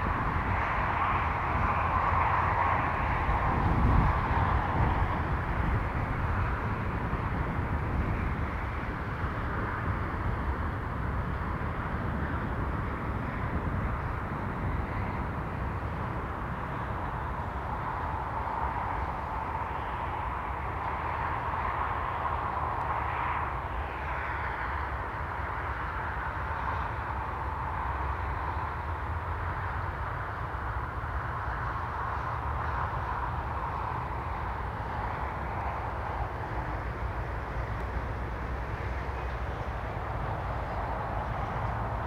Contención Island Day 36 inner south - Walking to the sounds of Contención Island Day 36 Tuesday February 9th
The Drive Moor Crescent High Street Dukes Moor
Open grass
alternating sun
and wind driven snow squalls
the far hill disappears in the blizzard
Walkers throw a ball
for their enthusiastic dog
England, United Kingdom, 9 February 2021